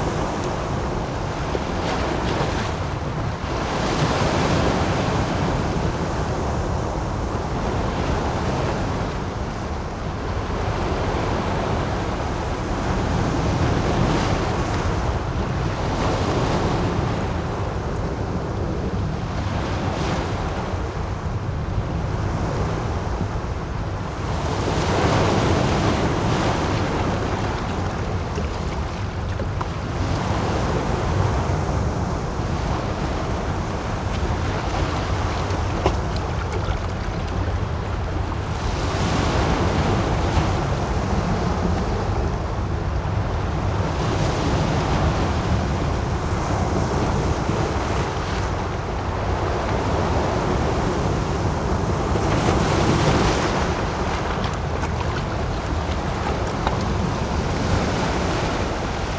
南灣頭, Bali Dist., New Taipei Cit - the waves
the waves, traffic sound
Sony PCM D50